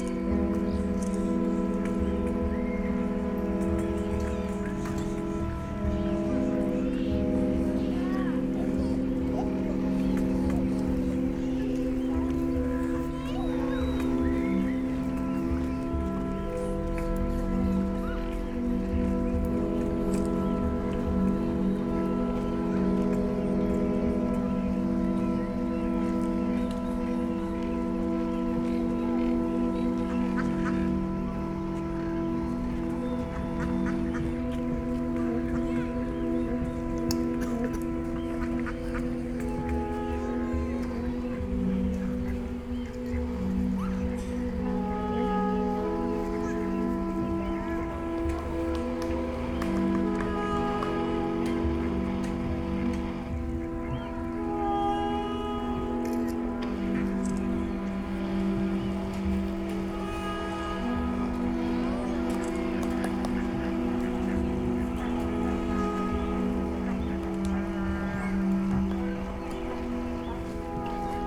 Viña del Mar, Valparaíso, Chile - Tsonami sound performance at laguna Sausalito
Viña del Mar, laguna Sausalito, sound performance for 16 instruments on pedal boats, by Carrera de Música UV and Tsonami artists
(Sony PCM D50, DPA4060)
6 December 2015, 19:30